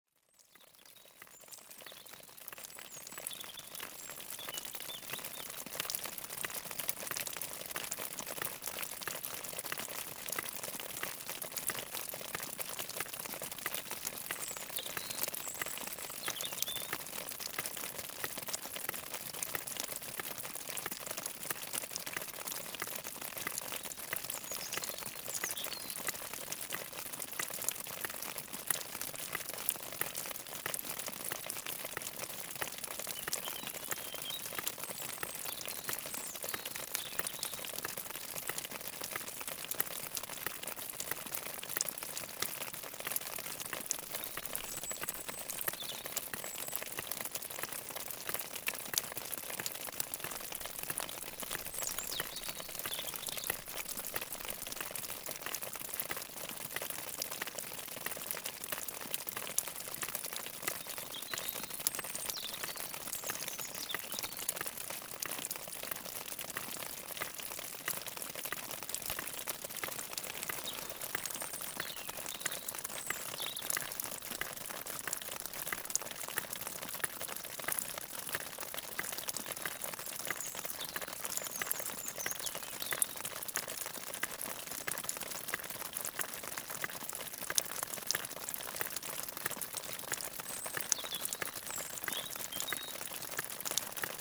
The Lozere Mounts. Early on the morning, slowly the sun is awakening. I'm shivering because of cold. This is a small stream, with a large part completely frozen.